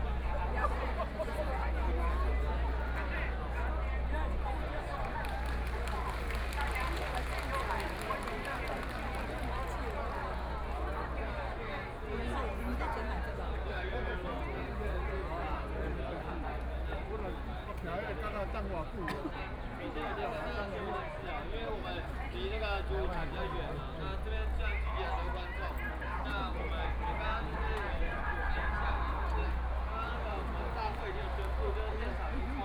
Zhongshan S. Rd., Taipei City - Protest
Sunflower Movement, More than fifty thousand people attended, All the streets are packed with people nearby
Taipei City, Taiwan, 30 March 2014